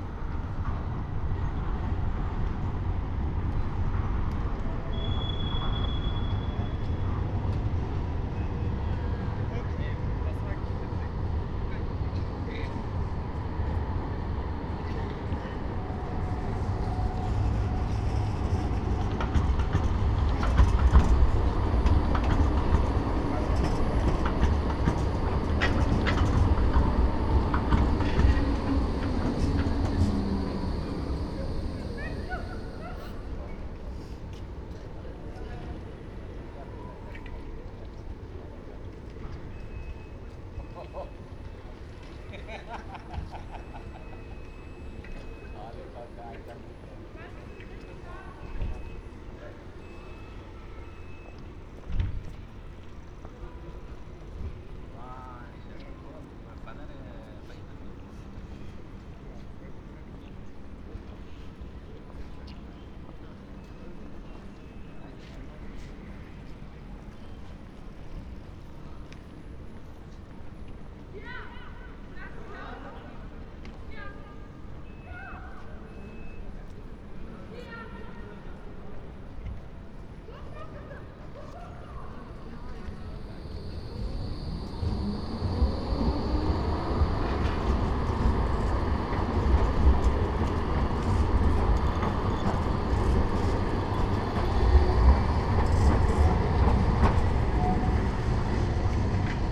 Marktplatz, Halle (Saale), Deutschland - walking
walking around on Marktplatz, Halle, Sunday evening in October. Trams and people.
(Sony PCM D50, Primo EM172)